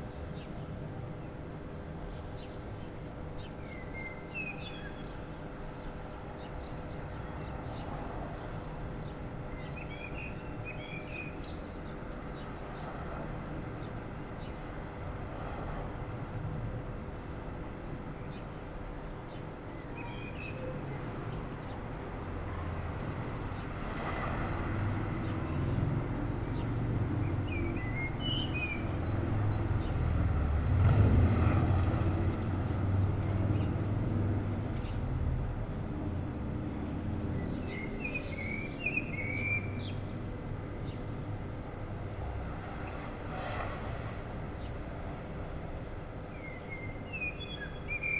standing on a roof of abandoned house and listening to soundscape